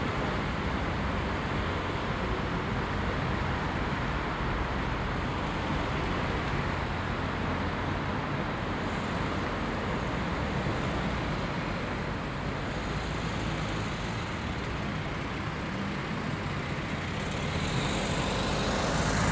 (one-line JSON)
{"title": "Hôpital Sainte-Élisabeth, Uccle, Belgique - Parking ambience", "date": "2022-01-14 14:50:00", "description": "Cars on the avenue, in the parking, some people passing by, a raven at 4'33.\nTech Note : SP-TFB-2 binaural microphones → Sony PCM-D100, listen with headphones.", "latitude": "50.81", "longitude": "4.37", "altitude": "118", "timezone": "Europe/Brussels"}